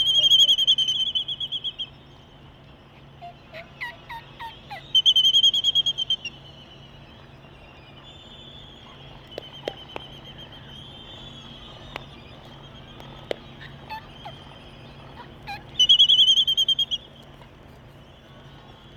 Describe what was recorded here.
Laysan albatross dancing ... Sand Island ... Midway Atoll ... calls and bill clapperings ... open Sony ECM959 one point stereo mic to Sony Minidisk ... warm ... sunny blustery morning ...